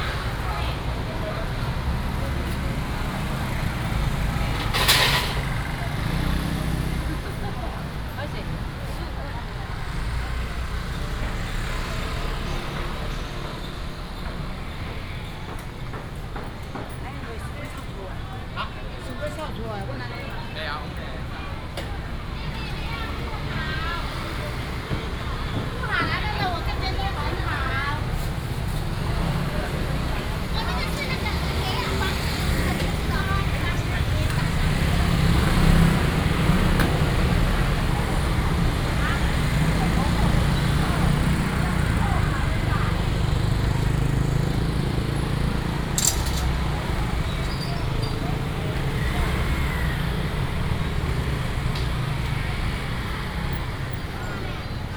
Zhongping Rd., Taiping Dist., Taichung City - Walking through the traditional market
Walking through the traditional market, Binaural recordings, Sony PCM D100+ Soundman OKM II